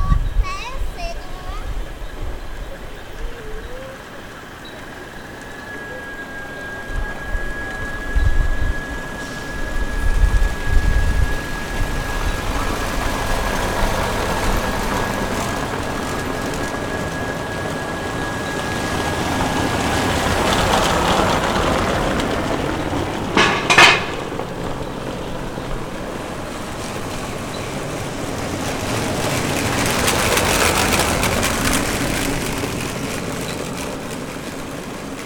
Cruz das Almas, Bahia, Brazil - O som da Mata
Captação de audio no Parque Ambiental Mata de Cazuzinha uma reserva florestal localizado no bairro Ana Lúcia em Cruz das Almas Bahia Brazil a captação foi nos dia 04 de Março de 2014 as 18:30h, Atividade da disciplina de Sonorização ministrada pela docente Marina Mapurunga do Curso de Cinema e Audiovisual da Universidade Federal do Recôncavo da Bahia UFRB CAMPUS LOCALIZADO NA CIDADE DE cachoeira Bahia Brazil, Equipamento utilizado foi o gravador de audio Tascam Dr40 formato em Wave 16 bits 44.100 khz.